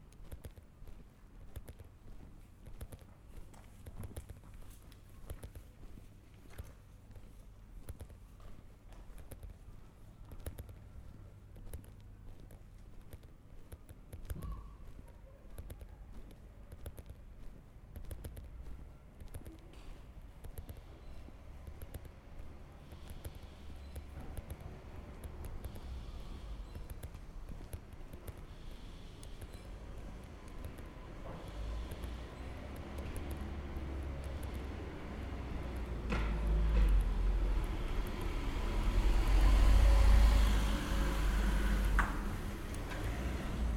Realejo-San Matias, Granada, Granada, Spain - Soundwalk from Alhambra
I was walking down the street from Alhambra, microphones attached to the backpack. Unfortunately the zipper of bag was clicking while walking. In the beginning you can hear the water streaming down the small channels both side of street.
11 December 2015